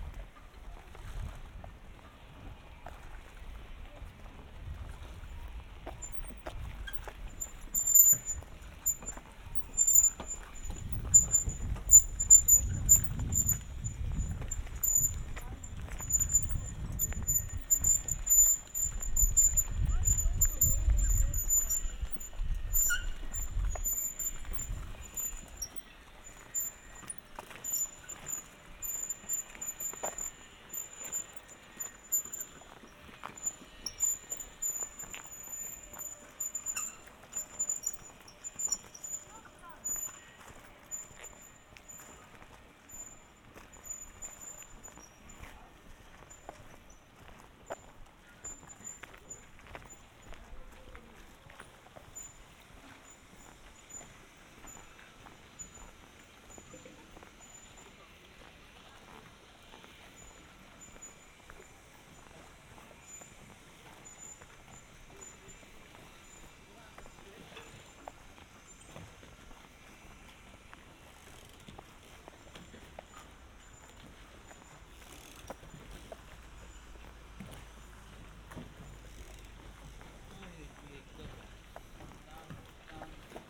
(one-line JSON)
{"title": "Marina, Zaton, Croatia - (787 BI) Squeaking cart", "date": "2021-05-22 15:38:00", "description": "Binaural recording of a squeaking cart used to transport baggage from parking to the boats.\nRecorded with Sennheiser Ambeo Smart Headset on iPhone 12 pro, app: Twisted Recorder.", "latitude": "43.78", "longitude": "15.83", "altitude": "9", "timezone": "Europe/Zagreb"}